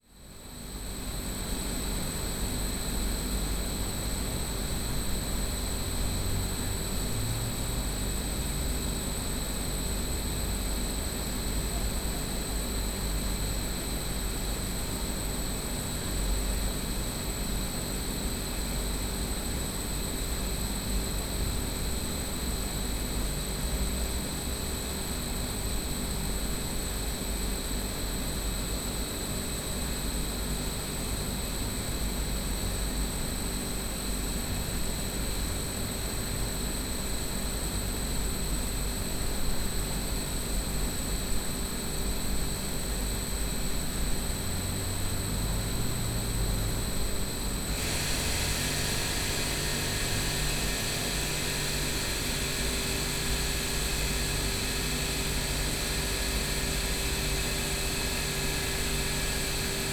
Maribor, Vodnikova trg, marketplace - ventilation drone
Maribor, Vodnikov, below market place, ensemble of 6 ventilators humming, then slowly fading out.
(SD702 + DPA4060)
Maribor, Slovenia, 31 July 2012